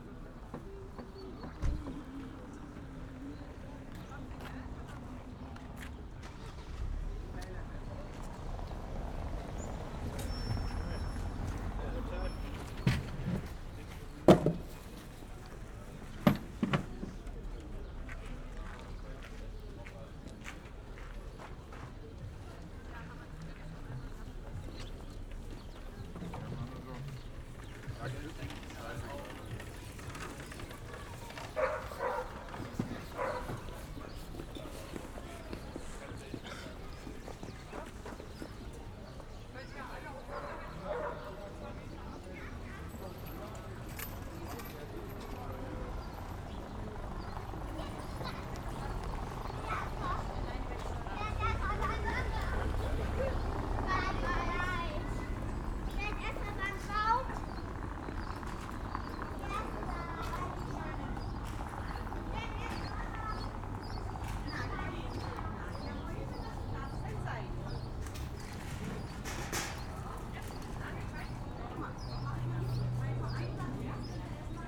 Maybachufer, Berlin, Deutschland - market walk
Soundscapes in the pandemic: walk over Maybachufer market, sunny afternoon in early spring, normally (and as you can hear from the many recordings around) this would be a crowded and lively place. not so now, almost depressed.
(Sony PCM D50, Primo EM172)
24 March 2020